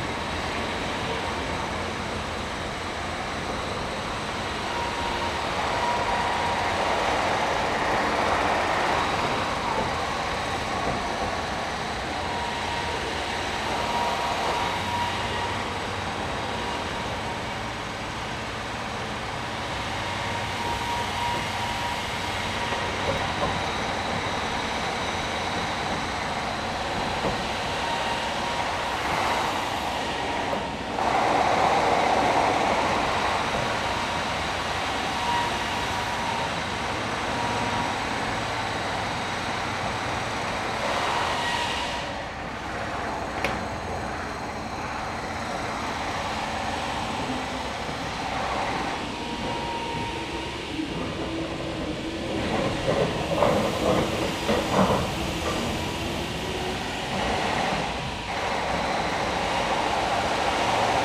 London's loudest building site? Thames Embankment, London, UK - London's loudest building site from under the Millennium Bridge
This site is being redeveloped and plans show that the new building will have a roof with gardens and walkways. Currently it is one of loudest building sites in town - constant pneumatic drills and whining machines easily audible on the opposite river bank and further. Amazing how much noise is created by 'caring constructors' for a 'green' development! In quieter moments it's possible to hear passing footsteps resonating in the metal of the millennium Bridge above.
England, United Kingdom, May 2022